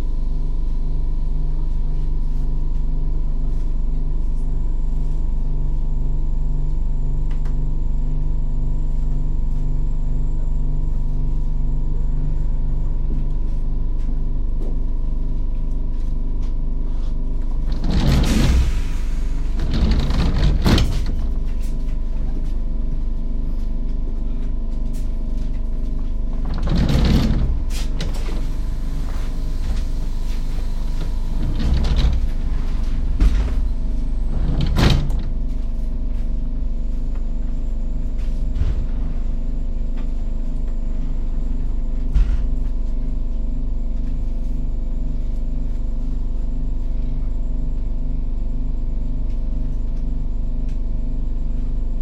Train Waiting to leave Katowice Poland
21 February